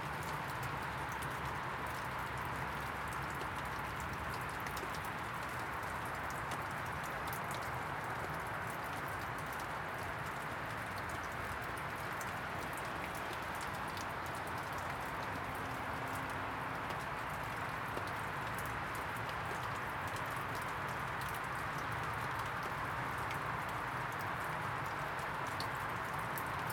Contención Island Day 4 inner southwest - Walking to the sounds of Contención Island Day 4 Friday January 8th
The Drive Moor Crescent Duke’s Moor Westfield Oaklands Oaklands Avenue Woodlands The Drive
Snow falling
The moor frozen
churned to sculpted mud at the gate
humans as cattle
Walking through snow
step across wet channels
that head down to the burn
stand inside an ivy tree
surrounded by dripping